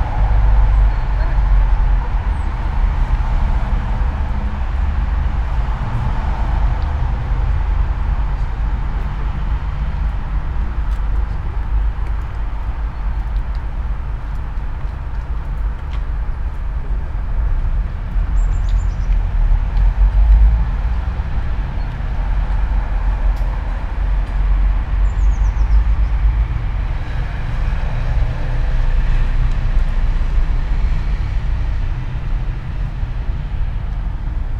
all the mornings of the ... - sept 4 2013 wednesday 07:09
Maribor, Slovenia, 4 September 2013